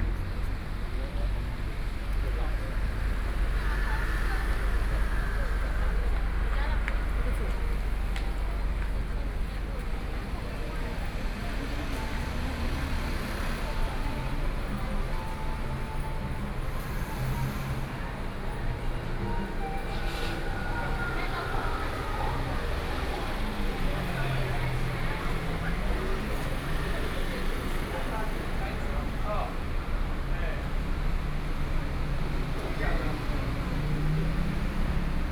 Zhonghua Rd., Hualien City - walking on the Road
walking on the Road, Various shops voices, Tourists, Traffic Sound